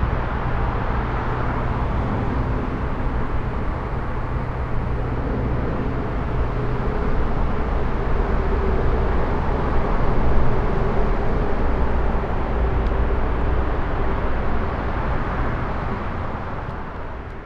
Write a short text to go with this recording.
highway traffic from above, below long concrete viaduct